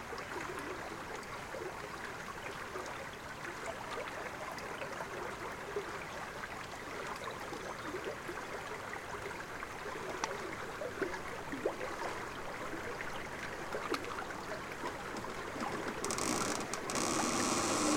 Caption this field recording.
the trail of river Savasa. the place to walk with family in quarantine time